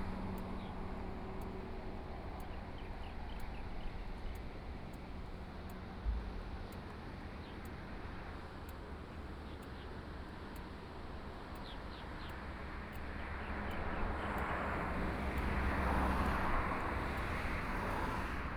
Traffic Sound, Birdsong, Sound of the waves, Very hot days